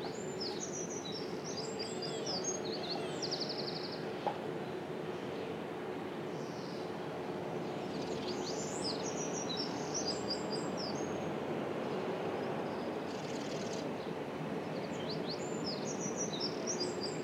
Frederick Cres, Port Ellen, Isle of Islay, UK - Port Ellen
Birds and ambience near the harbour of Port Ellen in the morning. You can hear some sounds coming from the cars approaching the harbour.
Recorded with Sound Devices MixPre-6 mkII and a pair of LOM Uši Pro microphones.
Alba / Scotland, United Kingdom, 7 May 2022, 08:30